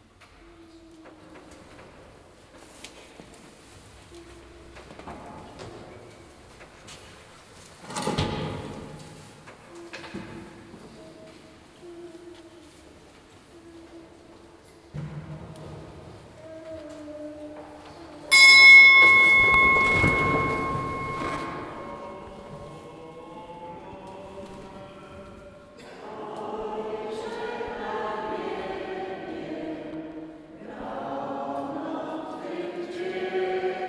Recorded Sunday morning (on ZOOM H2N), during the art festival Sanatorium of Sound in Sokolowsko.